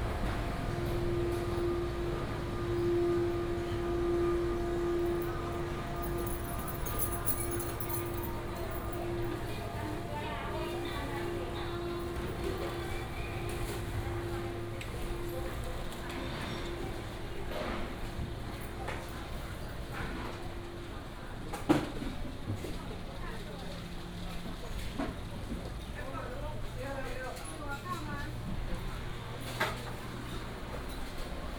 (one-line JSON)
{"title": "鳳山第一公有零售市場, Kaohsiung City - in traditional market", "date": "2018-03-30 10:19:00", "description": "Walking in traditional market blocks, motorcycle", "latitude": "22.62", "longitude": "120.36", "altitude": "14", "timezone": "Asia/Taipei"}